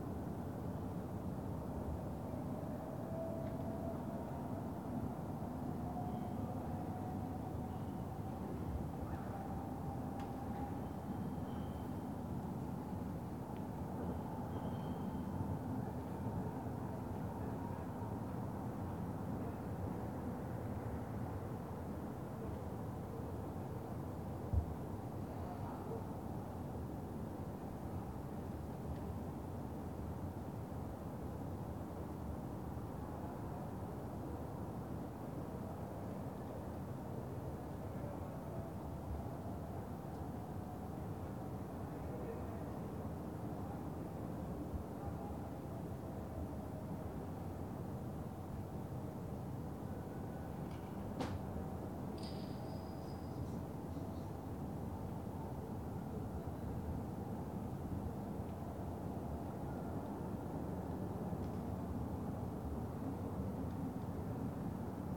I didn't know that the two churches across the street do not chime their bells at midnight.
No I do, after recording during the most silent Friday night I have ever witnessed in my neighbourhood (it's been 12 years).
On a Sony PCM D-100